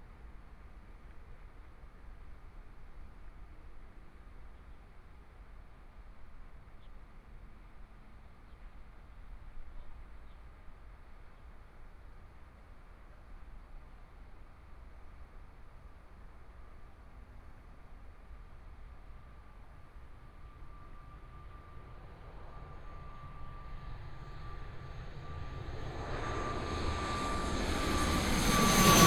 中山區大佳里, Taipei City - Aircraft flying through
Aircraft flying through, Traffic Sound, Binaural recordings, Zoom H4n+ Soundman OKM II
15 February 2014, 15:58